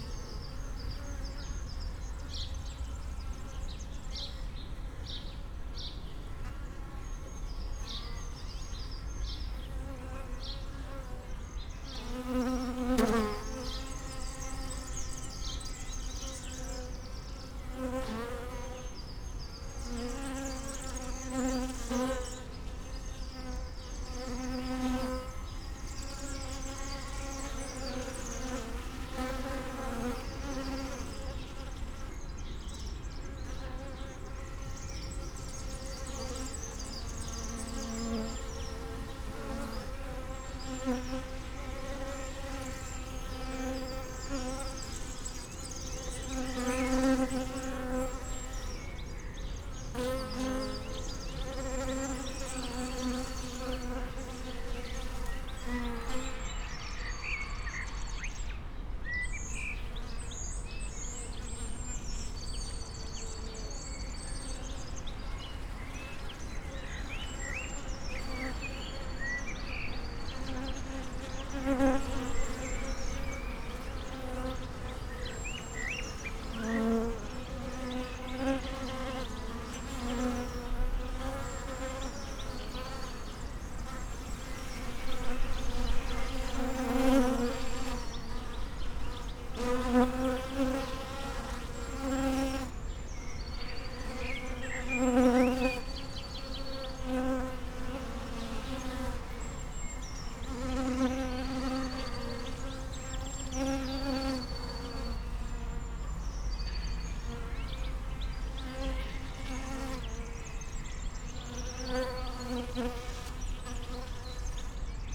cemetery Friedhof Lilienthalstr., Berlin, bees gathering at a water container became attracted to the microphones
(Sony PCM D50, Primo EM272)